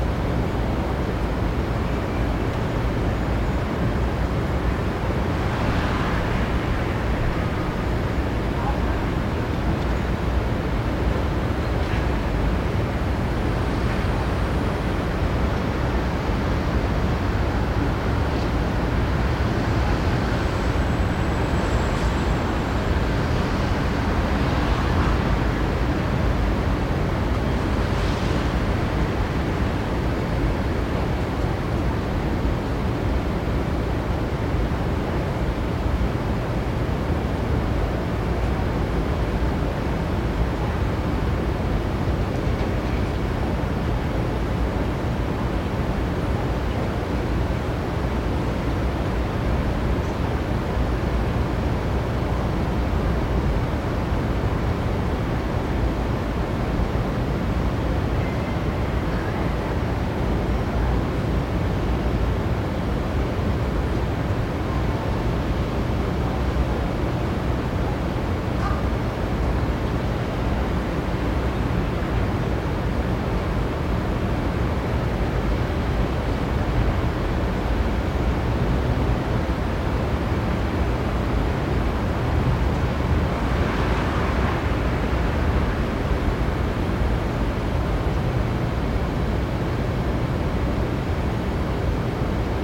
Rua Taguá - Night
Night in São Paulo in one business day. #brasil #SAOPAULO #CENTRO #Hospitais #BRAZIL